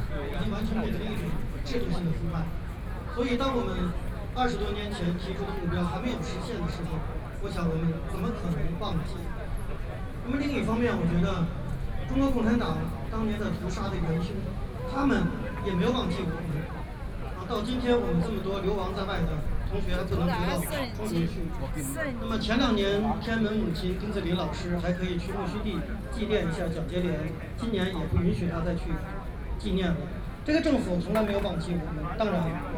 National Chiang Kai-shek Memorial Hall, Taipei - Speech
Wang Dan, a leader of the Chinese democracy movement, was one of the most visible of the student leaders in the Tiananmen Square protests of 1989., Sony PCM D50 + Soundman OKM II
中正區 (Zhongzheng), 台北市 (Taipei City), 中華民國